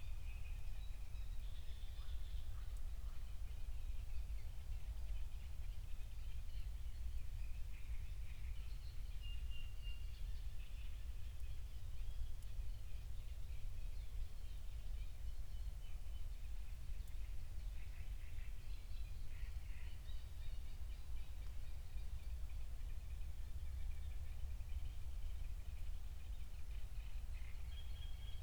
Berlin, Buch, Mittelbruch / Torfstich - wetland, nature reserve
03:00 Berlin, Buch, Mittelbruch / Torfstich 1